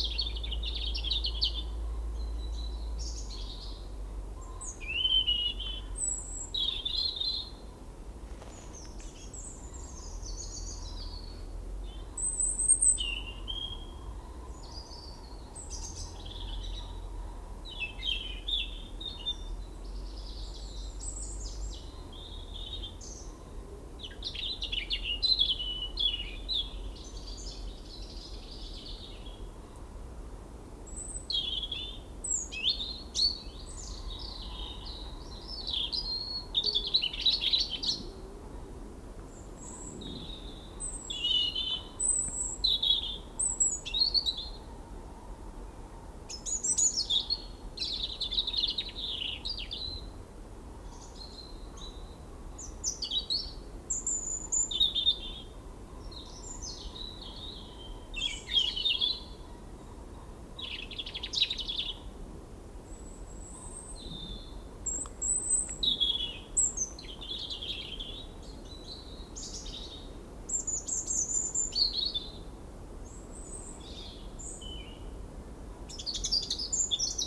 Fen Covert, Suffolk, UK - Two Robins sing in the damp dusk woodlands
This a spooky place, especially at dusk. It is wet and marshy. Fallen trees grow twisting branches that slither over each other. White birch trunks almost glow in the fading light. Breaths of wind occasionally pass by leaving errie stillness in their wake.